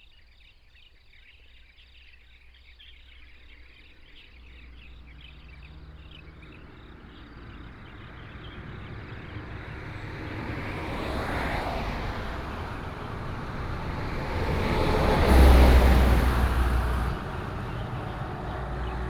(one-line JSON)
{"title": "獅子鄉南迴公路, Shizi Township, Pingtung County - Beside the road", "date": "2018-03-28 05:42:00", "description": "Beside the road, Traffic sound, Bird call", "latitude": "22.21", "longitude": "120.73", "altitude": "67", "timezone": "Asia/Taipei"}